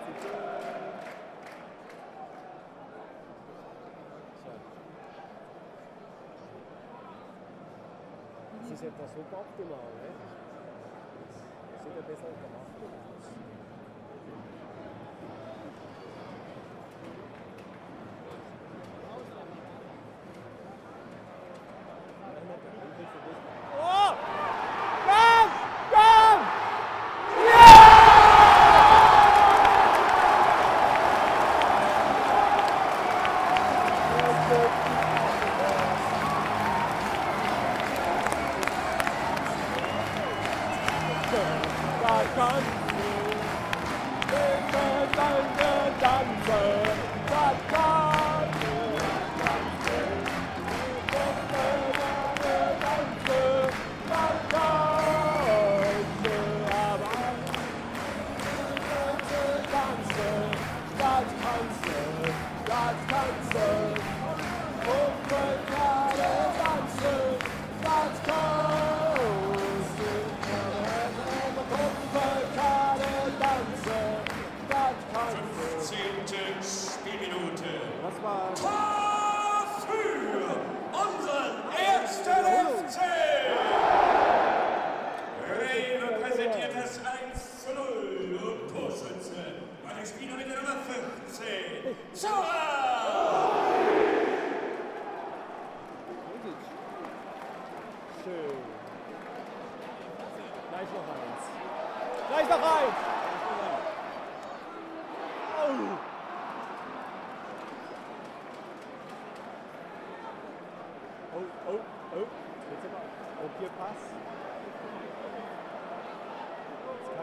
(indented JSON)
{
  "title": "Stadium Cologne",
  "description": "Goal for Cologna in the match against Bochum",
  "latitude": "50.93",
  "longitude": "6.87",
  "altitude": "69",
  "timezone": "Europe/Berlin"
}